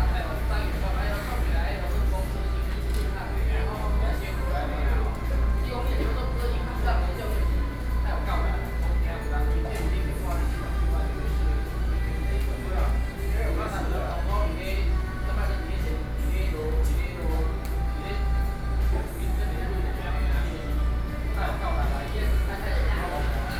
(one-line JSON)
{"title": "Da'an District, Taipei - In the restaurant", "date": "2013-05-10 14:34:00", "description": "In the restaurant, Air conditioning noise, Sony PCM D50 + Soundman OKM II", "latitude": "25.02", "longitude": "121.53", "altitude": "18", "timezone": "Asia/Taipei"}